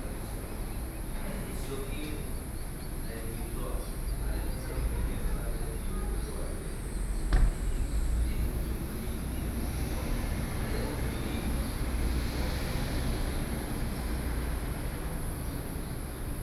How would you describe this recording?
In the temple, Very hot weather, Traffic Sound